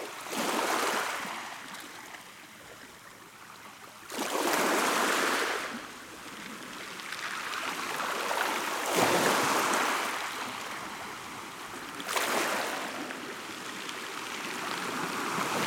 Patmos, Vagia, Griechenland - Meeresstrand, Kies